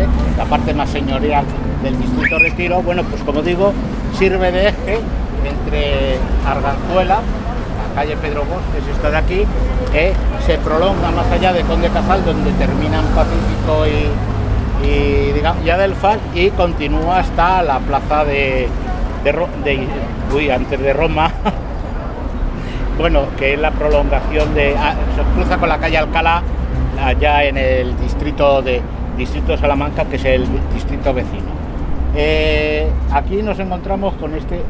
Pacífico, Madrid, Madrid, Spain - Pacífico Puente Abierto - Transecto - 06 - Puente de Pacífico con Dr. Esquerdo
Pacífico Puente Abierto - Transecto - Puente de Pacífico con Dr. Esquerdo